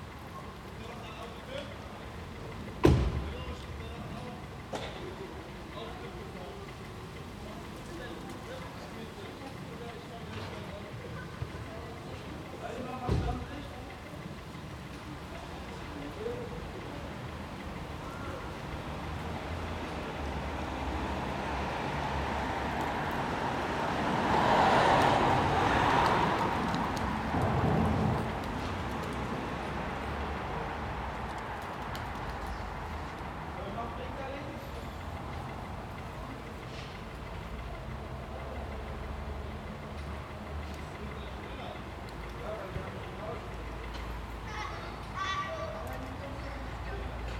Biesentaler Straße, Soldiner Kiez, Wedding, Berlin, Deutschland - Biesentaler Straße 6, Berlin - Quiet Sunday afternoon
Biesentaler Straße 6, Berlin - Quiet Sunday afternoon.
[I used the Hi-MD-recorder Sony MZ-NH900 with external microphone Beyerdynamic MCE 82]
Biesentaler Straße 6, Berlin - Ein ruhiger Sonntnachmittag.
[Aufgenommen mit Hi-MD-recorder Sony MZ-NH900 und externem Mikrophon Beyerdynamic MCE 82]
4 October 2012, 17:17